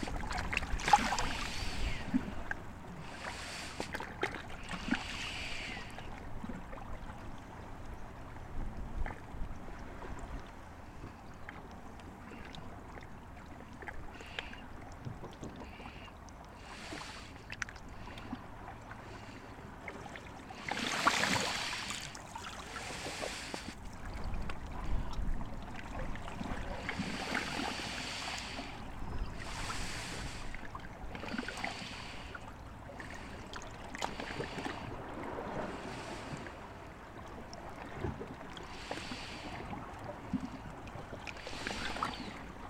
Kissamos, Crete, breathing sea
effect of breathing sea. small microphones placed among the stones